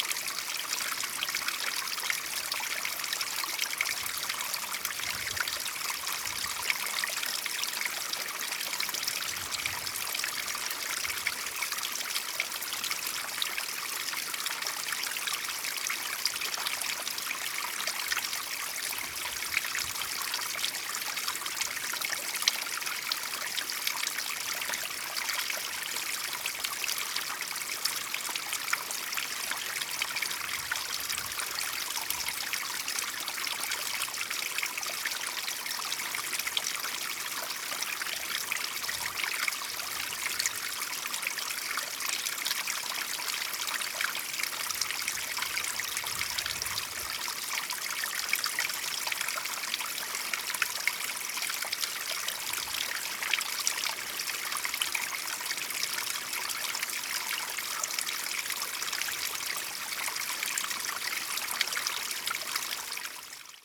A small waterfall, White Sea, Russia - A small waterfall.
A small waterfall.
Небольшой водопад, стекающий с Зимних гор.
June 2014